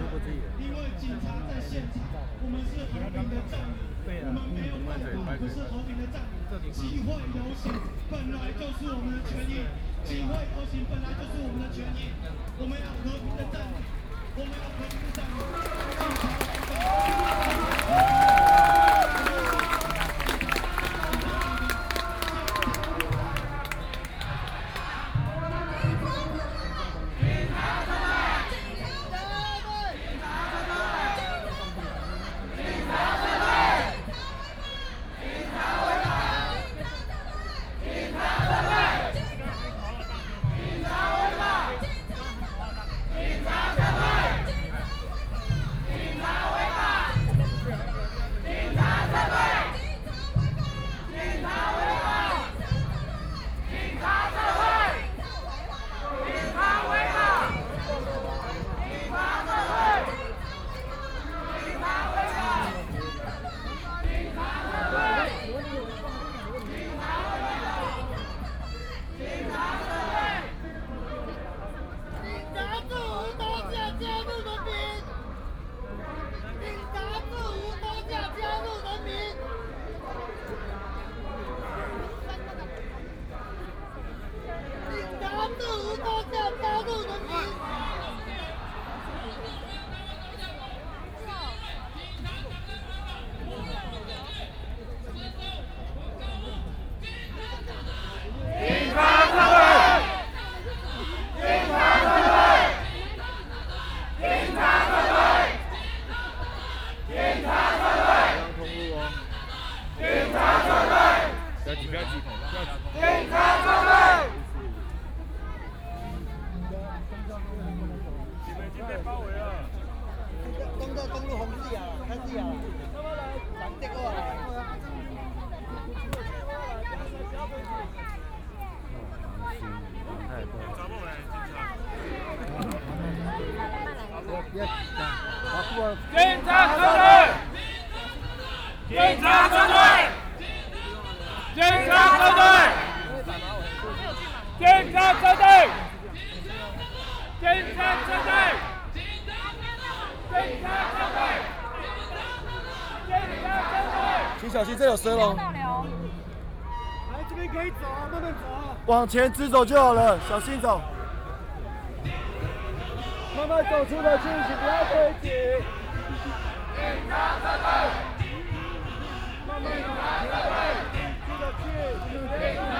中正區梅花里, Taipei City - occupied
Student activism, Walking through the site in protest, People and students occupied the Executive Yuan